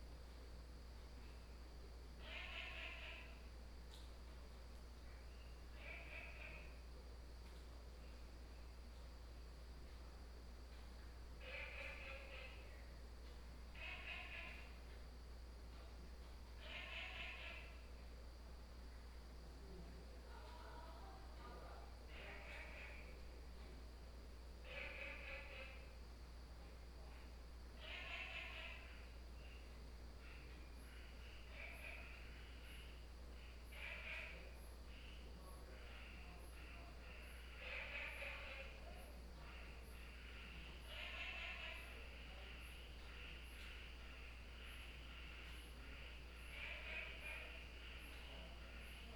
Woody House, 南投縣埔里鎮桃米里 - In the restaurant
Frogs sound, In the restaurant, at the Bed and Breakfast
Nantou County, Taiwan, 2 September